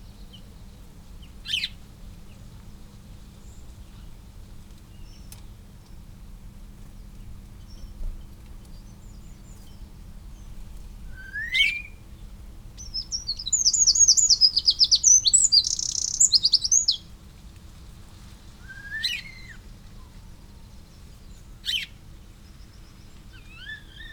Starling ... song ... calls ... mimicry ... creaking ... sqeaking ... etc ... lavalier mics clipped to sandwich box ...